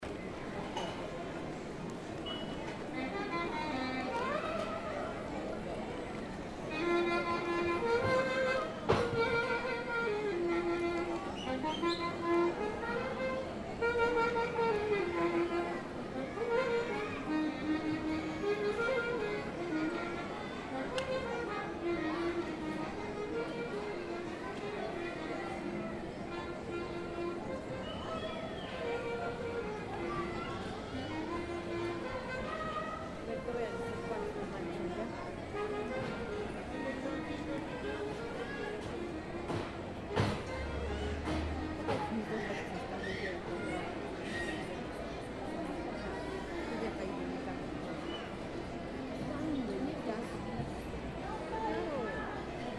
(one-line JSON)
{"title": "Mercado Central de Cusco (central market Cusco-Perú)", "date": "2007-12-23 10:00:00", "description": "Soundscape of central market San Pedro of Cusco, Perú.", "latitude": "-13.52", "longitude": "-71.98", "altitude": "3260", "timezone": "America/Lima"}